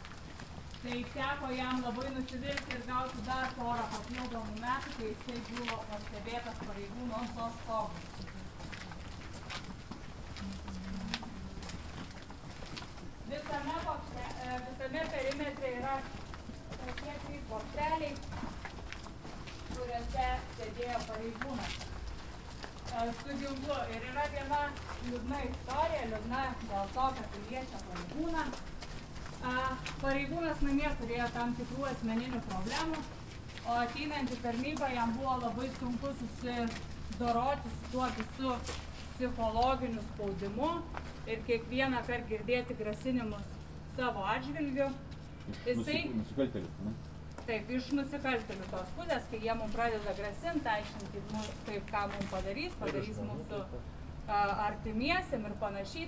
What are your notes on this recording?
Night excursion in recently closed Lukiskiai prison. Perimeter walk.